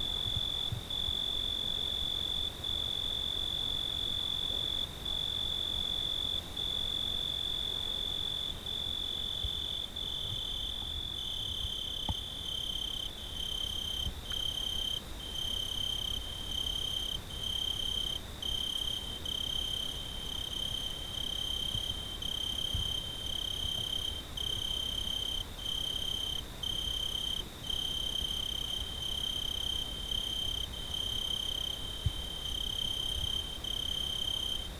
Riserva Naturale dello Zingaro, San Vito Lo Capo TP, Italia [hatoriyumi] - Paesaggio estivo notturno
Paesaggio estivo notturno con cicale, grilli e insetti
Castellammare del Golfo Province of Trapani, Italy, 1 July, ~22:00